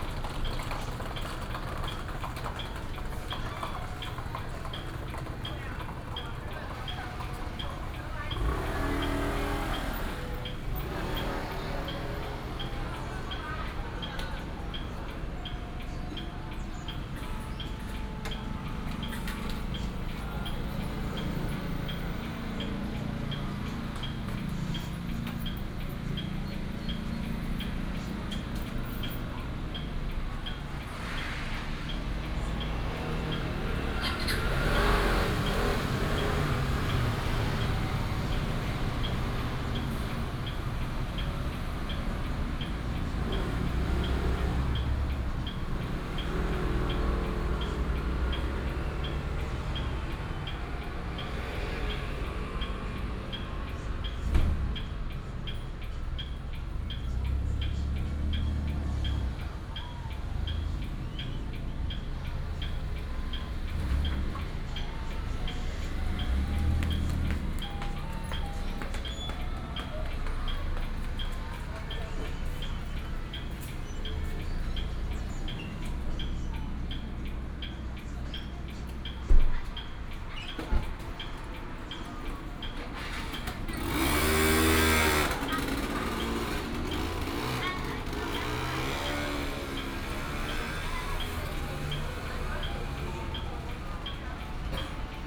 Huazong Rd., Xuejia Dist., Tainan City - Outside the convenience store
Outside the convenience store, Traffic sound, discharge
Binaural recordings, Sony PCM D100+ Soundman OKM II